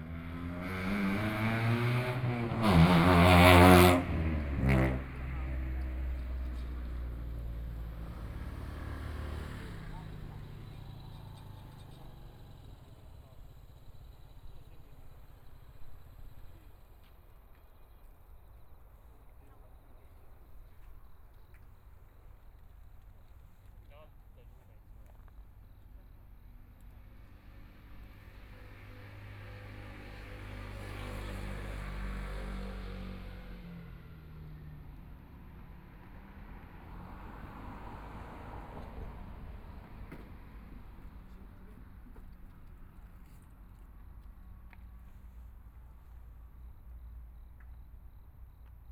Taipei City, Taiwan - The airport at night
The airport at night, Traffic Sound, Binaural recordings, Zoom H4n+ Soundman OKM II